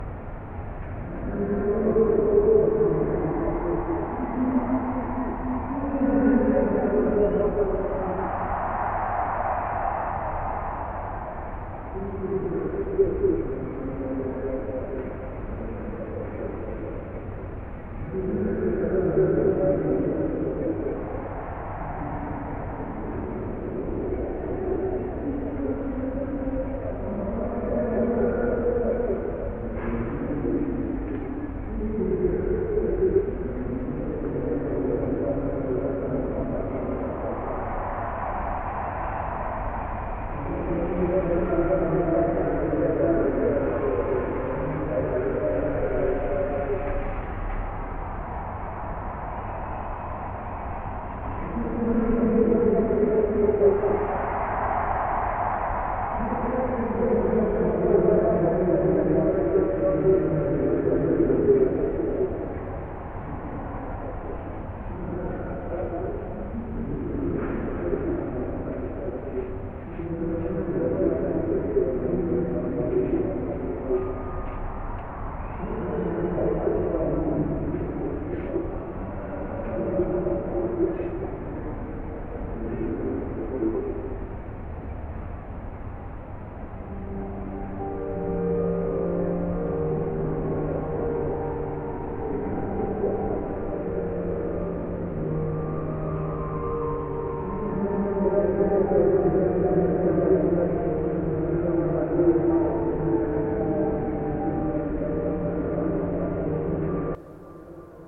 Rue Jacques Cartier, Aix-les-Bains, France - Star et son public
Orelsan parle à son public au festival Musilac, sur l'esplanade du lac du Bourget, le son de la sono est déformé par les échos multiples sur les façades d'immeubles du quartier, captation sur un balcon avec ZoomH4npro.